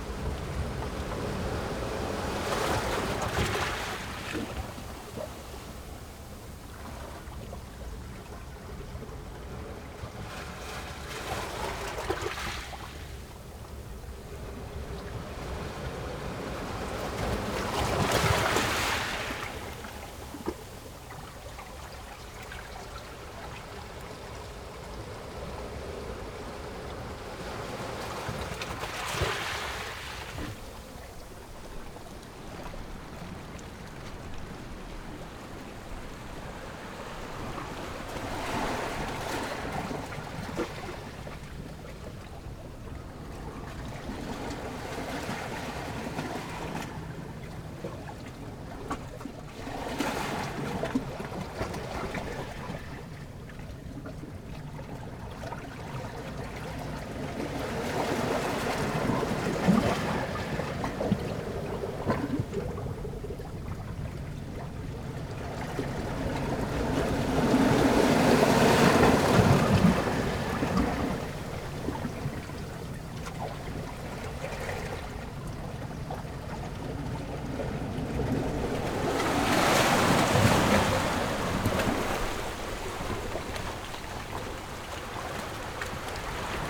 Rocky coast, Small pier, sound of the waves
Zoom H6 +Rode NT4